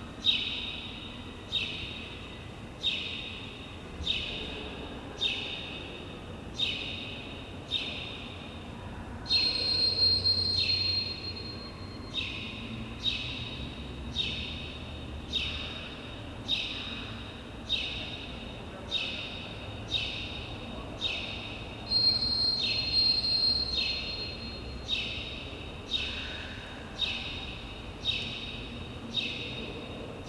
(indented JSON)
{
  "title": "bird and motion sensor in gym, Vaike-Oismae Tallinn",
  "date": "2011-07-09 15:00:00",
  "description": "gym hall of a former school. only a bird and a motion sensor beep can be heard.",
  "latitude": "59.42",
  "longitude": "24.64",
  "altitude": "16",
  "timezone": "Europe/Tallinn"
}